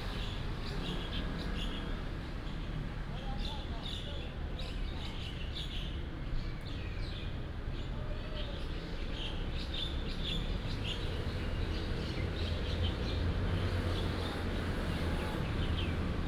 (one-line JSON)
{"title": "竹東林業展示館, 竹東鎮Hsinchu County - Birds call", "date": "2017-01-17 11:49:00", "description": "Traffic sound, Birds call", "latitude": "24.74", "longitude": "121.09", "altitude": "124", "timezone": "GMT+1"}